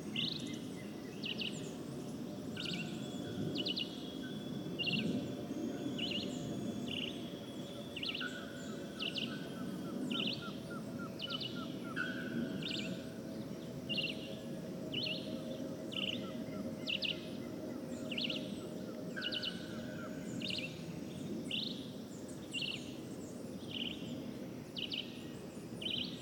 Pedra Grande, Cantareira - São Paulo - Brazil Atlantic Forest - Pedra Grande - city overview
An overview of the endless city that is engulfing the forest...